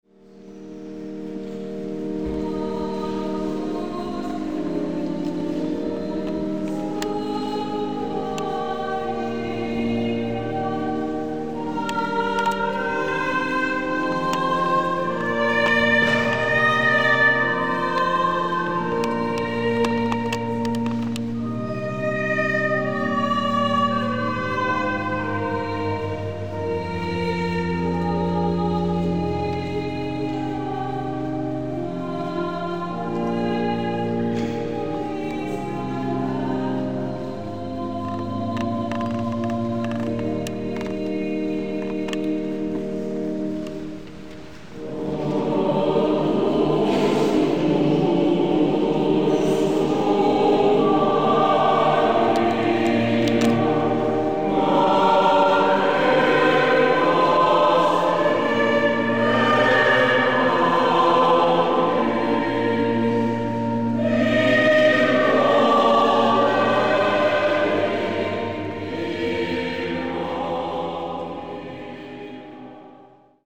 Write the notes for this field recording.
Concerto sacro: Totus Tuus Schola Cantorum Sedico